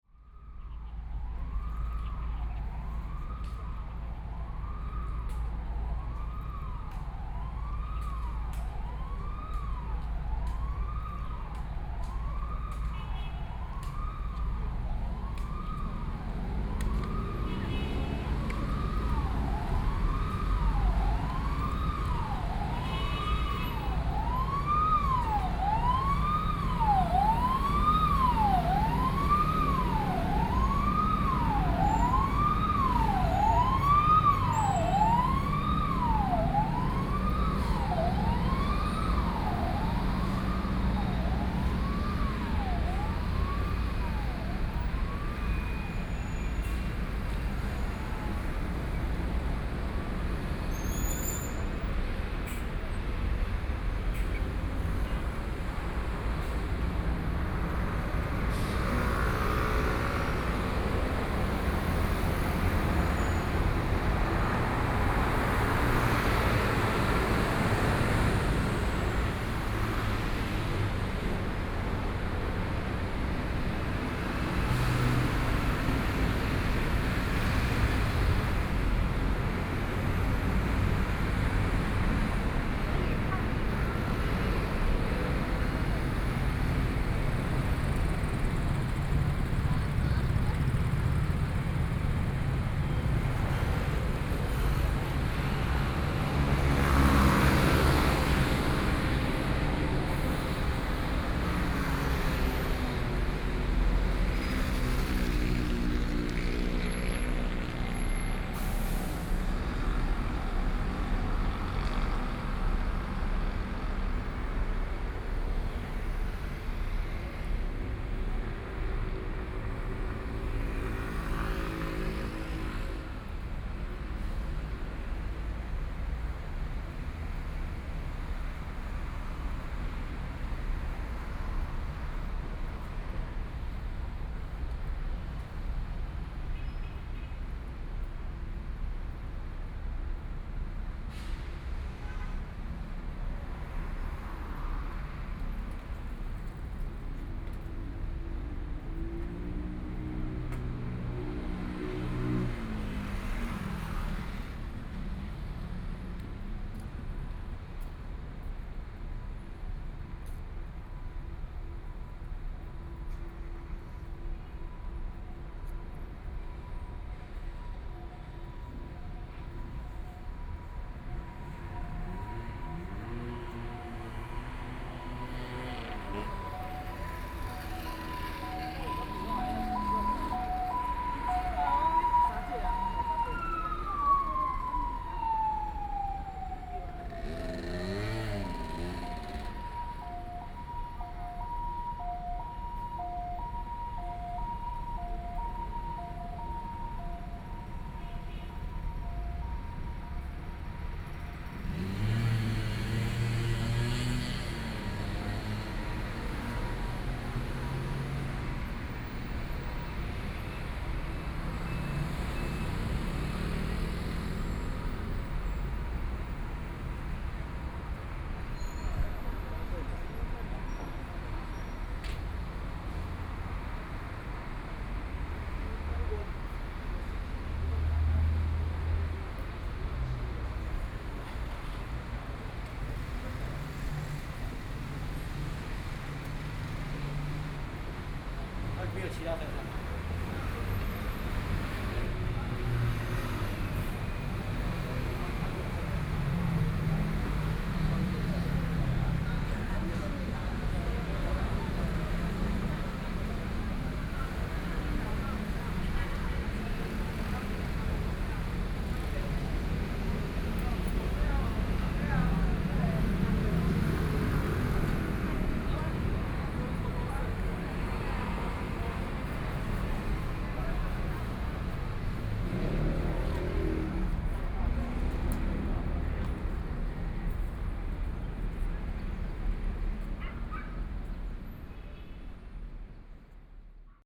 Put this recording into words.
Walking on the road, Environmental sounds, Traffic Sound, Binaural recordings